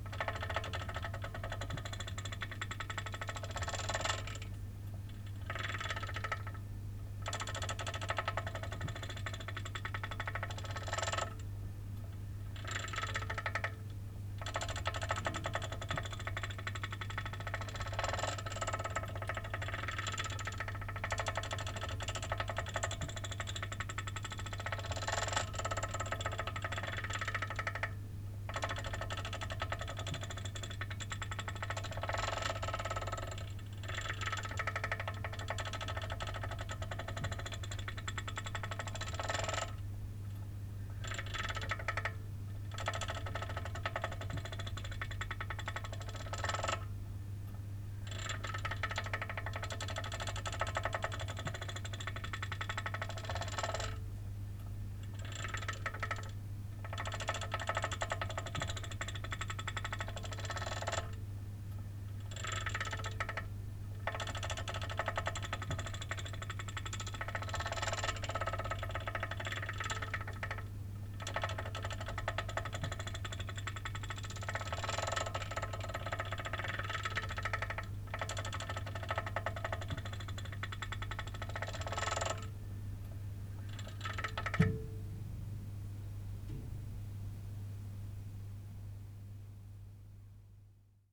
berlin, friedelstraße: gaszähler - the city, the country & me: gas meter
the city, the country & me: january 8, 2011